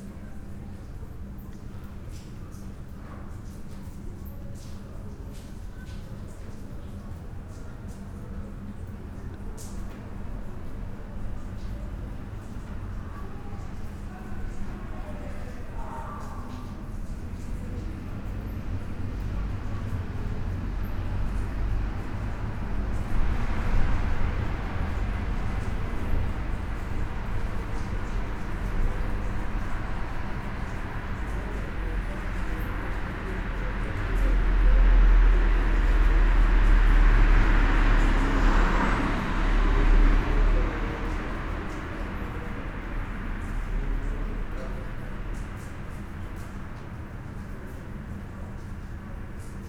gospejna ulica, maribor - raindrops deep inside
Maribor, Slovenia, 20 August 2014